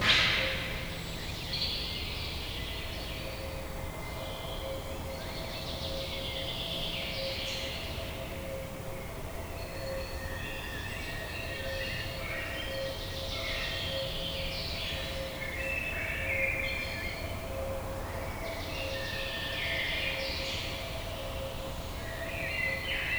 Abandoned soviet rocket base
Inside the rocket tunnel